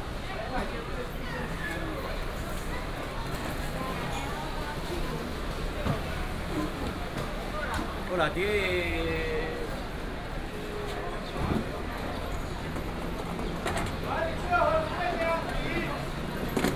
Walking in the Vegetable wholesale market, Traffic sound